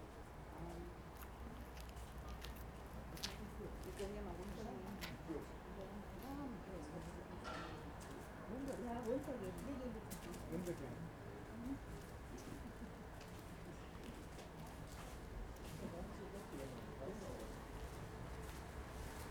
Festspielhügel, Bayreuth, Germania - “Hommage a RW and JC in the time of COVID19: soundscape”
“Hommage to RW and JC in the time of COVID19: soundscape”
On Saturday, July 25th, the 2020 Bayreuth Festival with the singing masters of Nuremberg was supposed to open.
Because of the Corona virus epidemic the festival will not take place.
On Monday, July 20 I passed in front of the Festpielhaus in the early afternoon and I made a 4 channel surround recording of 4'33" of sounds by placing the recorder on the central step of the main entrance door, obviously closed.
In non-pandemic conditions, it would still not have been the full fervency of the festival, but certainly, the situation would have been less quiet and, I suppose, you could have heard the sounds of the final days of rehearsal and preparations filtered out of the Festspielhause.
Start at 2:25 p.m. end at 2:30 p.m. duration of recording 4’33”